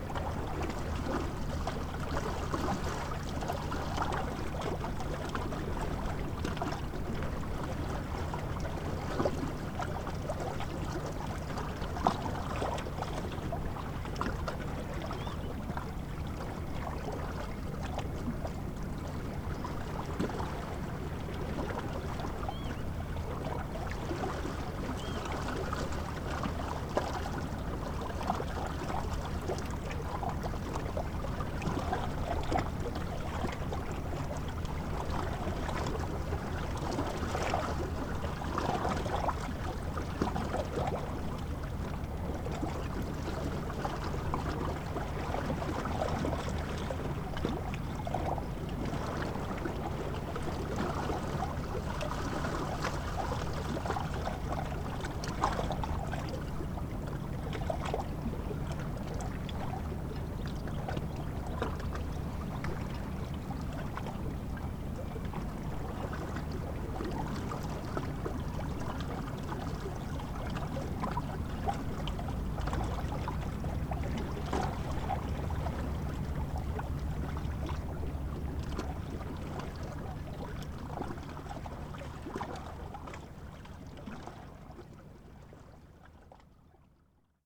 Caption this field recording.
lapping waves of the ijsselmeer, the city, the country & me: june 20, 2011